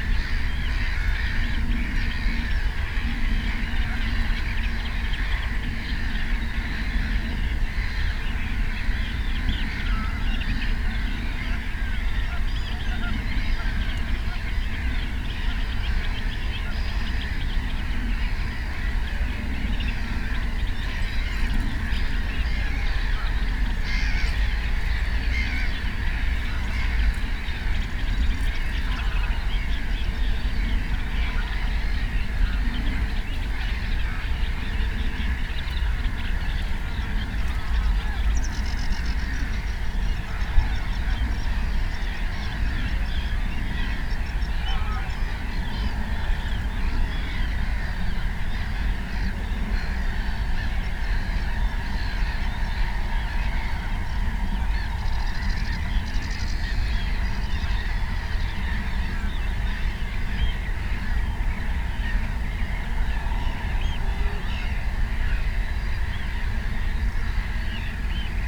workum: bird sanctuary - the city, the country & me: birds vs. planes
cries of all kinds of birds
the city, the country & me: june 29, 2015
Workum, Netherlands, 29 June 2015, 22:39